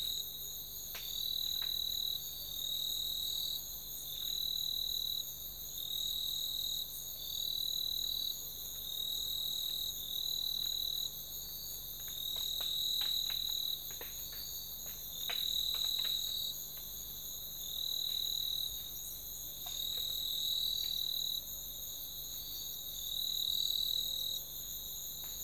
Cape Tribulation, QLD, Australia - night in the dubuji mangroves
taken from a 2 hour recording made in the dubuji mangroves. in the distance you can faintly hear some music from the town as well as drones from the generators.
recorded with an AT BP4025 into an Olympus LS-100.
Cape Tribulation QLD, Australia, 24 December 2013